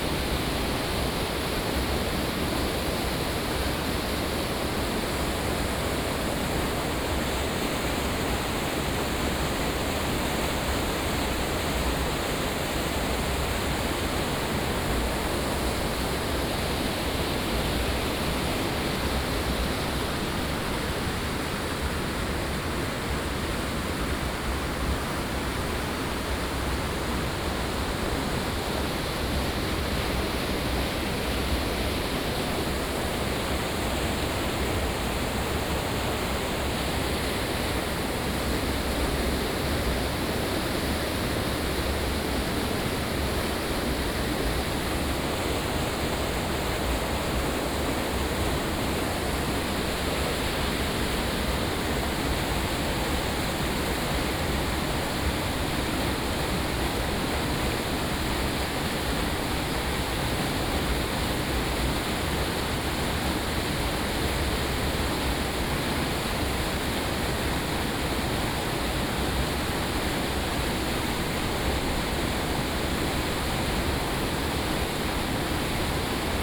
玉門關, 埔里鎮成功里 - stream
stream
Binaural recordings
Sony PCM D100+ Soundman OKM II